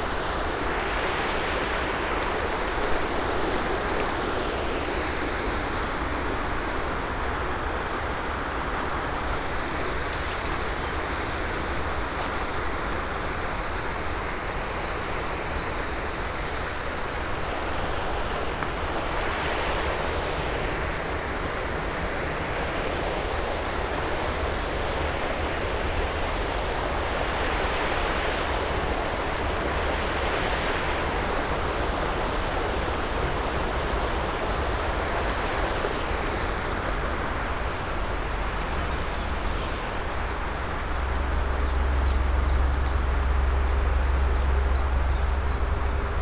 dry grass, broken trees, high stems, it seems there were high waters here recently